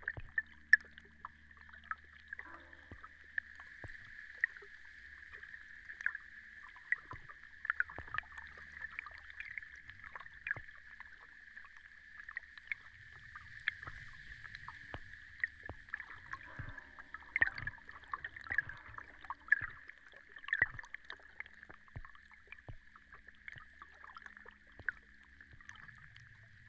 lake Kertuoja, Lithuania, underwater
hydrophone...the las recording of one of JrF hydrophones - it broke during the session